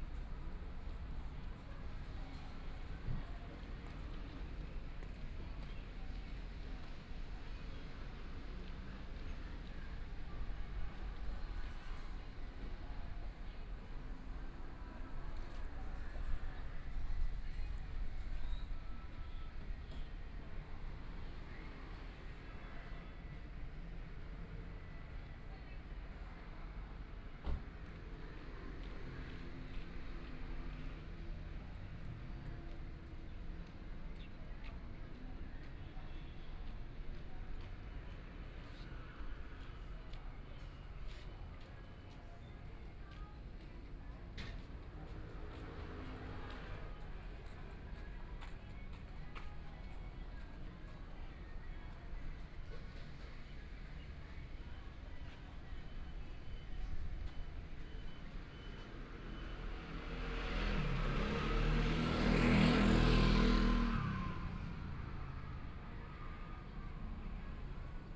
Historical monuments, Birdsong sound, Hot weather, Traffic Sound
15 May, 11:30